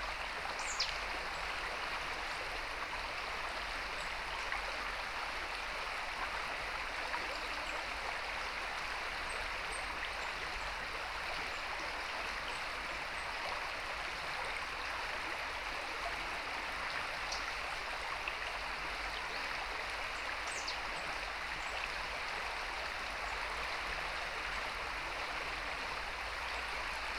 Ammerhof, Tübingen, South-Germany - Ammer near Tübingen
small river (Ammer), some birds, falling nut.
14 September 2019, 16:06